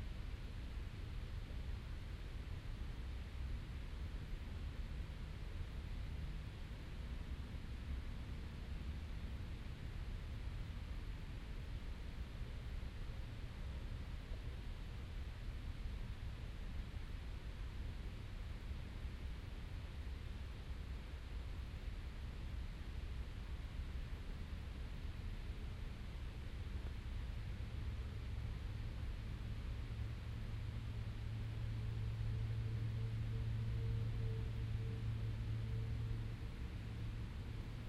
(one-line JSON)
{"title": "vancouver, grouse mountain, silent forest", "description": "within the grouse mountain forest, sitting on an old tree, listening to the silence\nsoundmap international\nsocial ambiences/ listen to the people - in & outdoor nearfield recordings", "latitude": "49.37", "longitude": "-123.08", "altitude": "762", "timezone": "GMT+1"}